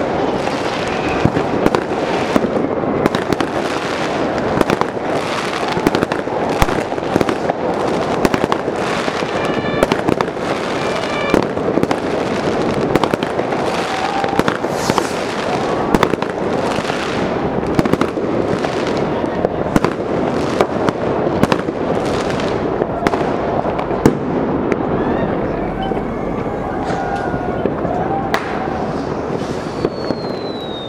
Berlin: Vermessungspunkt Maybachufer / Bürknerstraße - Klangvermessung Kreuzkölln ::: 01.01.2013 ::: 00:07